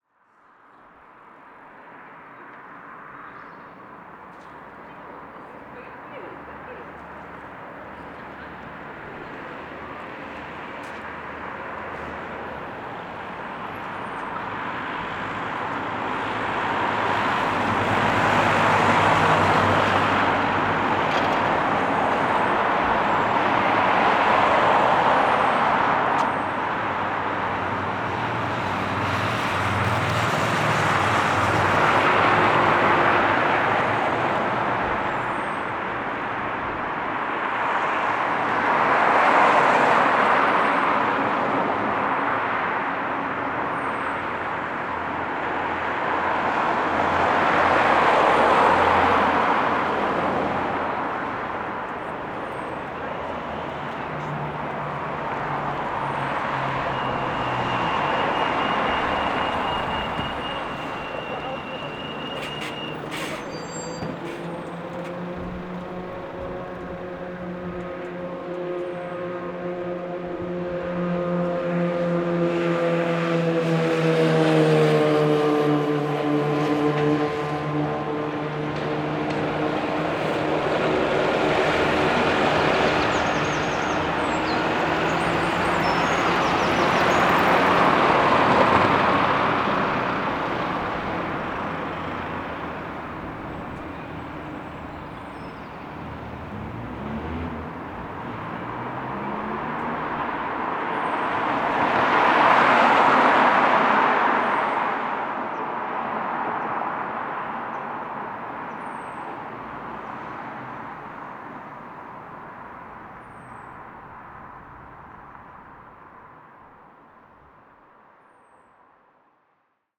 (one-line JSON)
{"title": "Queens University Belfast", "date": "2020-03-27 13:00:00", "description": "A Friday afternoon with no students circling around the campus, it has left a void in Queen’s quarter, such as being in the middle of an open dessert and seeing no signs of life for great distances.", "latitude": "54.58", "longitude": "-5.94", "altitude": "17", "timezone": "Europe/London"}